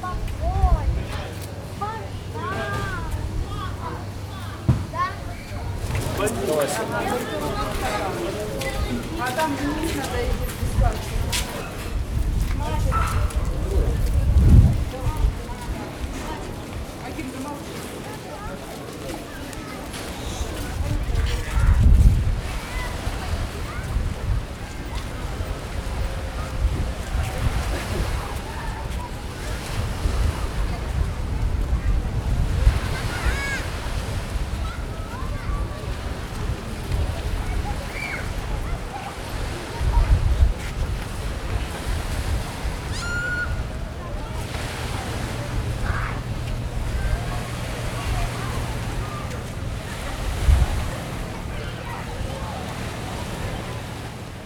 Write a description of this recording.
Since 1783, means for Russians ever since, they come to recover on the Crimean seaside. Here you listen into sundried plants, insects and birds occupying this empty rotting away complex of beautiful sanatoria buildings. Then i take the zoom-recorder with me to bring it slowly closer to the beach, which is also left behind by tourism, only a few pro-annexion holiday-winners from russia promenade, the music is still playing for the memories of past summers full of consumption and joy.